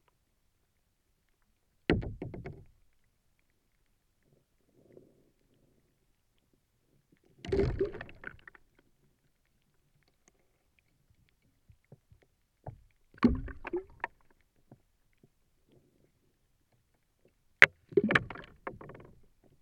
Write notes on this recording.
-A soundscape, -A photo of the place, -Write the exact location and some infos about you, And we will post it ASAP